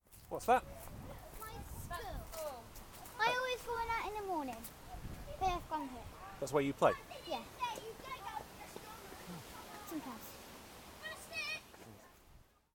Efford Walk Two: My school - My school